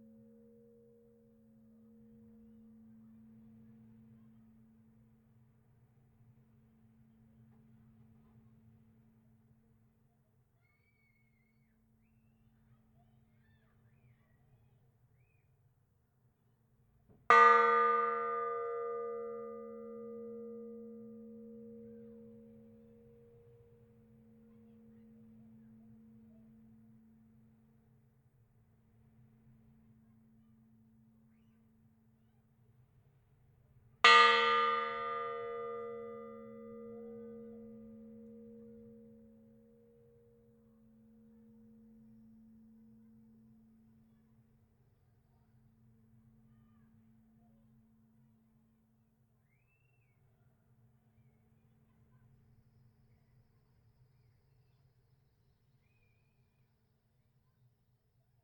Rue du Maréchal Foch, Brillon, France - Brillon (Nord) - église St-Armand
Brillon (Nord)
église St-Armand
Tintement cloche grave
Hauts-de-France, France métropolitaine, France, 15 March